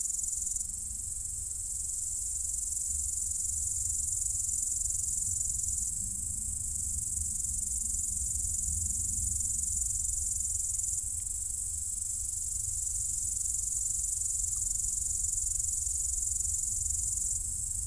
Bėdžiai, Lithuania, in the grass (lows appearing)
High grass in the forest...high sounds of insects...lows appear - lows are so human...